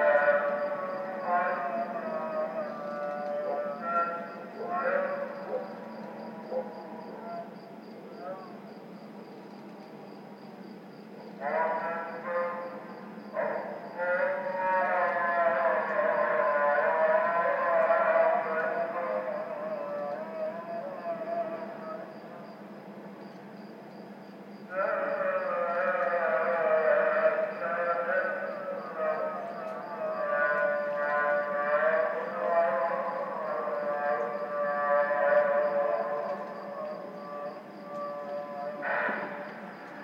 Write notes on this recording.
Recording of a morning prayer call made from a boat. AB stereo recording (17cm) made with Sennheiser MKH 8020 on Sound Devices MixPre-6 II.